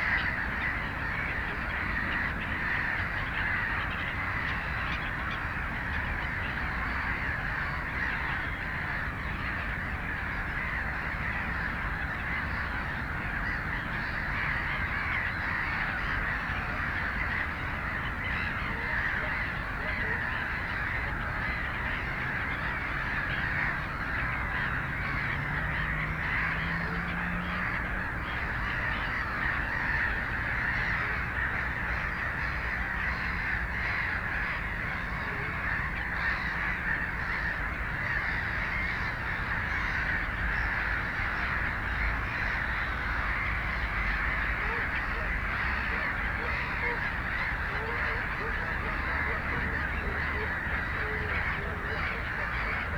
berlin, alt-heiligensee: nieder neuendorfer see (havel) - the city, the country & me: canada geese
canada geese at lake nieder neuendorfer see (a part of the havel river)
the city, the country & me: march 17, 2012
Berlin, Germany